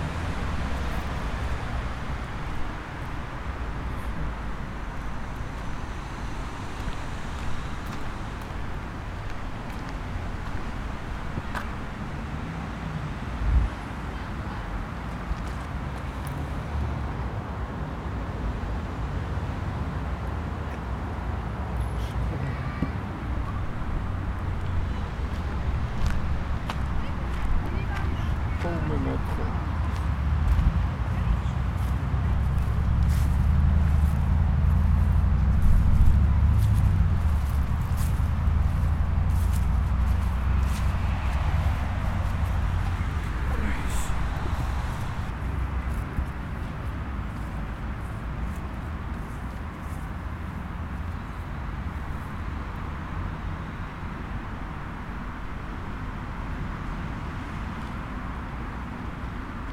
Imp. Allobroges, Cluses, France - Circulation
Ambiance de la circulation au centre de Cluses.
France métropolitaine, France, 26 August, 17:30